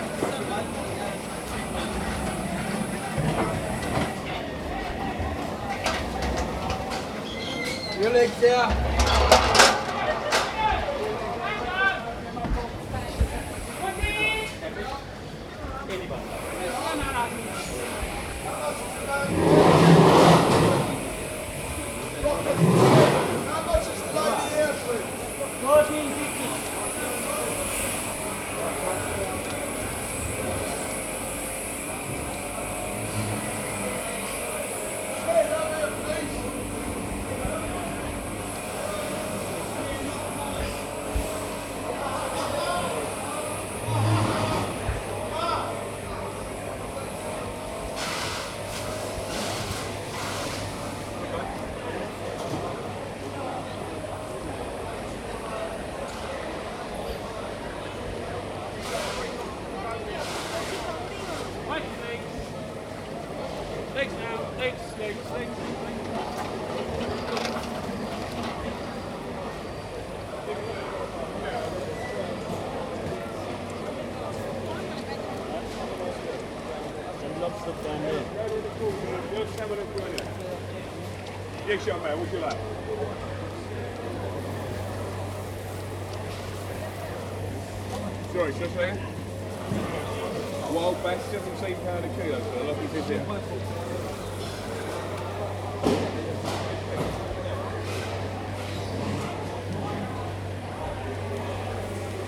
Billingsgate fish market Canary Wharf, London Borough of Tower Hamlets, London, UK - Billingsgate fish market recording
Walking around the market with a Zoom stereo mic, includes sounds of traders, porters, customers and crabs on polystyrene boxes.